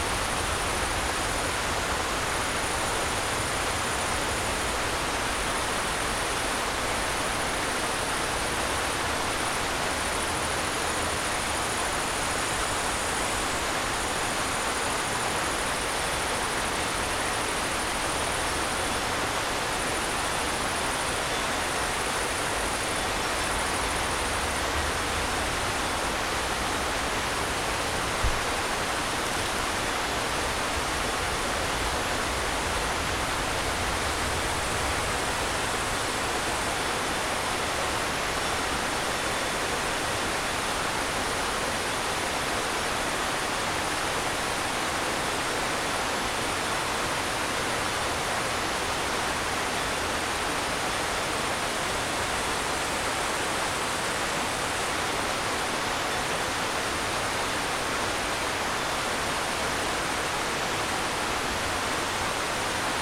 Près de la cabane Le Dahu, le bruit du torrent domine, quelques stridulations de sauterelles et criquets émergent ainsi que le tintement aléatoire de cloches de vaches.
France métropolitaine, France, 16 August 2022, ~19:00